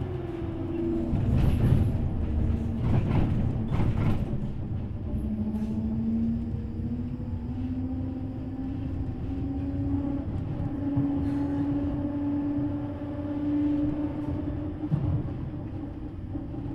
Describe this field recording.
Sound of the Brussels tramway engine. /zoom h4n intern xy mic